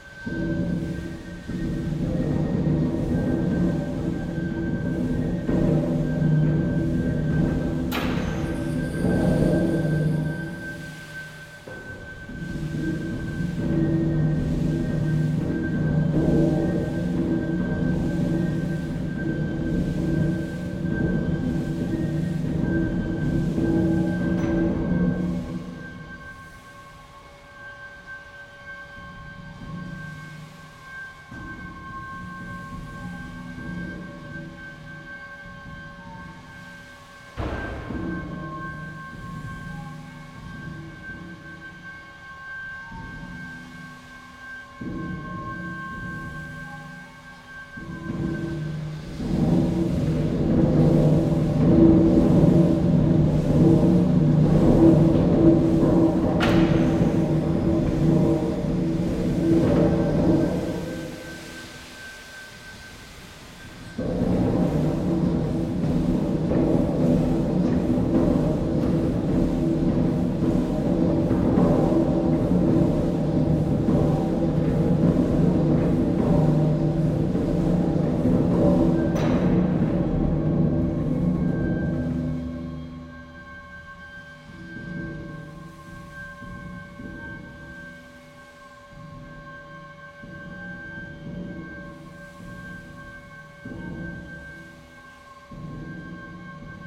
Kelham Island Museum, Sheffield, South Yorkshire, UK - River Don Engine. Kelham Island Museum
The River Don Engine. Housed within Kelham Island Museum.
Developing 12,000 horsepower, The River Don Engine is a 1905-built steam engine which was used for hot rolling steel armour plate. The engine is run for approximately two minutes every day at 12 and 2pm for visitors.
(recorded with Marantz 661 with Rode NT4)
8 May, 11:55